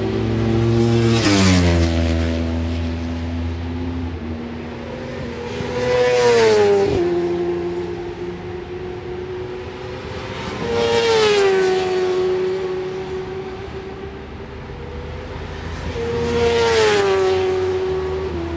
Brands Hatch GP Circuit, West Kingsdown, Longfield, UK - WSB 1998 ... Supersports 600s ... FP3 ...
WSB 1998 ... Supersports 600s ... FP3 ... one point stereo mic to minidisk ...